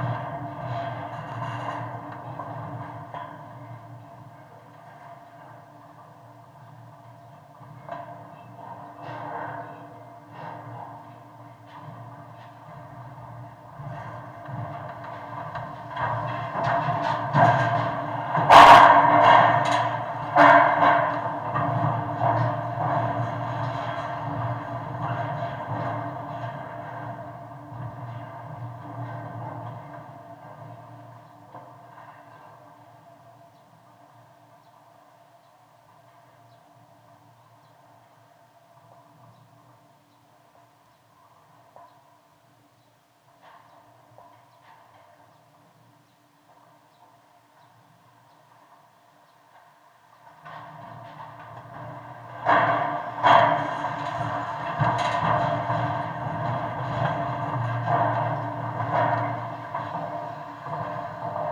Gdańsk, Poland - Most / Bridge / kontaktowe /contact mics
Most mikrofony kontaktowe, contact mics, rec. Rafał Kołacki
8 June 2015, ~10:00